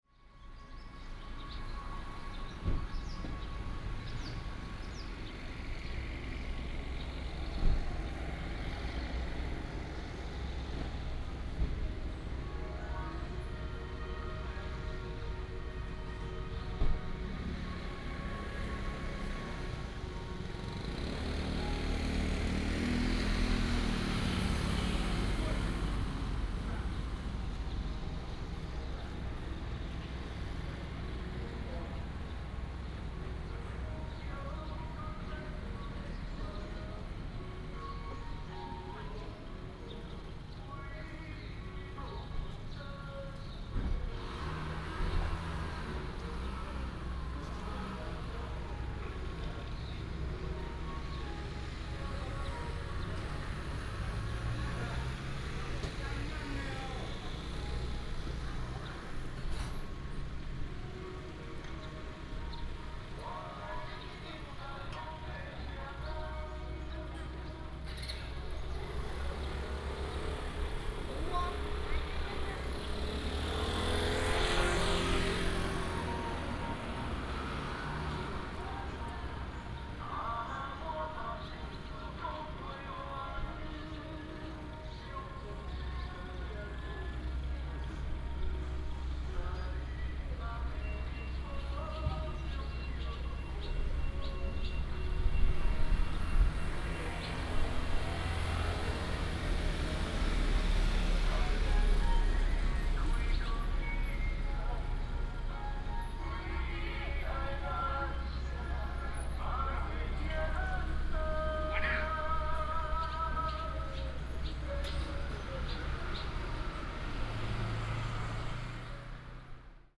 滿州鄉公所, Manzhou Township, Pingtung County - Village center
Bird cry, Traffic sound, Village center, vendor, Dog barking
Manzhou Township, Pingtung County, Taiwan, 2 April 2018, ~16:00